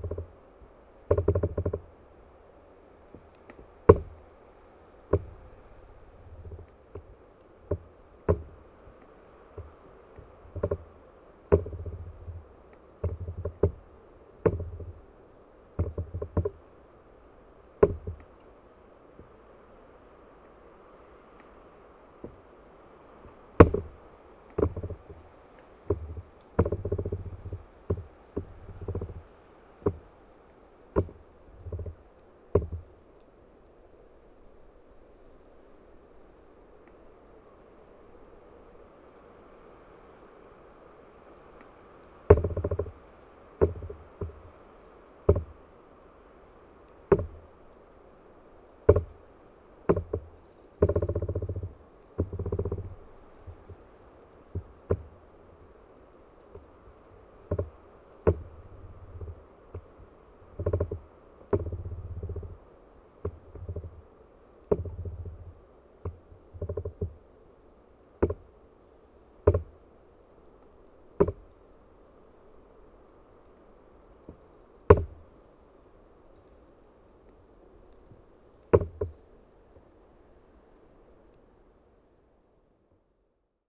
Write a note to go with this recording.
she trees rub at each other they always produce these strange, and every time different sounds